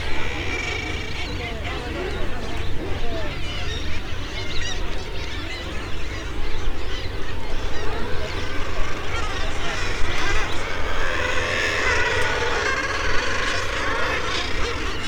Staple Island ... Farne Islands ... wall to wall nesting guillemots ... background noise from people ... boats ... cameras etc ... bird calls from kittiwakes ... young guillemots making piping calls ... warm sunny day ... parabolic ...